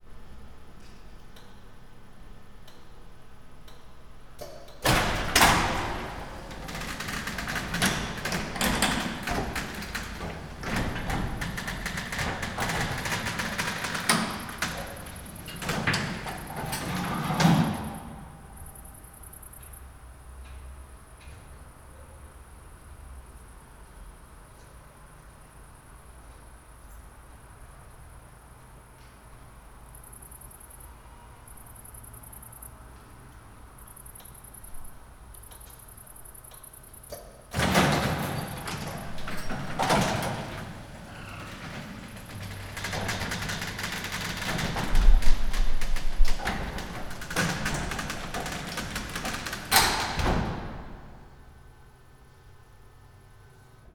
Poznan, underground parking lot - garage door
opening and closing the automatic gate to the garage
Poznań, Poland, August 2012